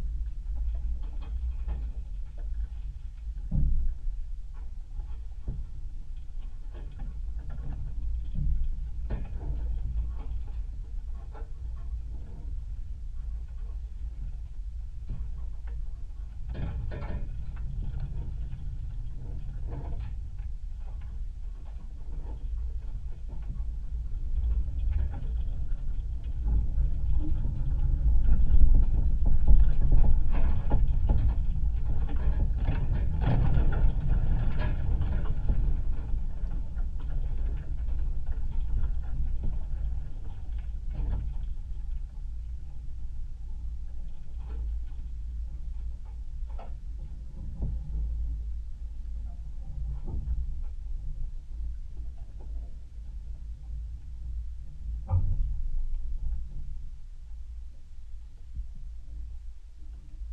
Lithuania, found fence - found fence in the forest
contact microphones on a piece of thrown away fence found in the forest